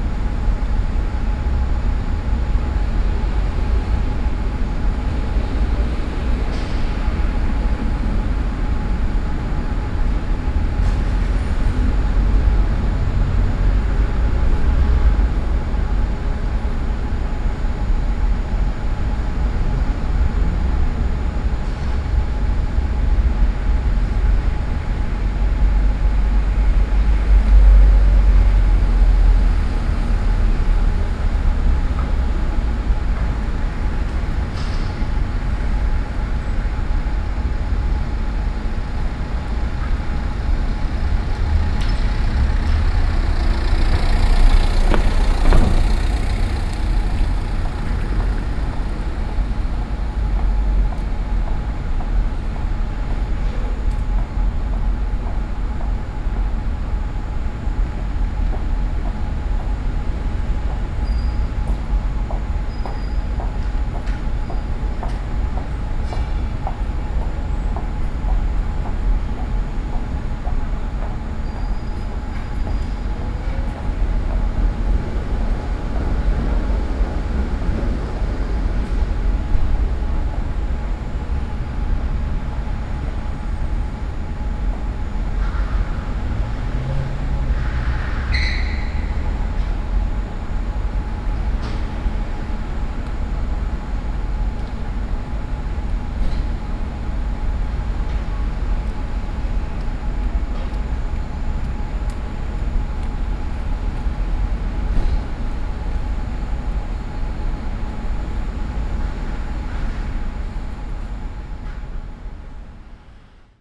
Brussels, Rue Dejoncker, electric buzz in the background.